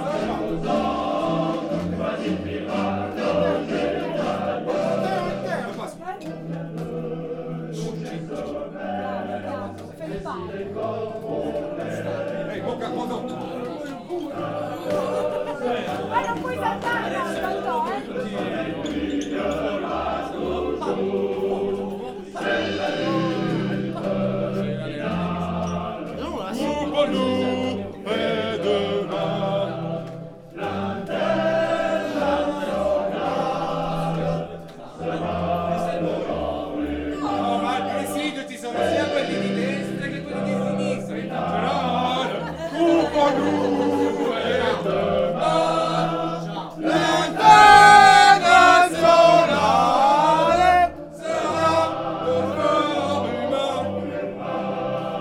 San Marzano, Taranto, Italy. Sonic memory: Tuning on IntSocialism in Southern Italy.
People fighting against the installation of one of the biggest landfill in southern italy, in a moment of rest after a big demonstration in the square, dreaming and tuning on Linternazionale socialism. The fight was supressed in the silence of local population, adding another source of probable pollution on an already compromised land.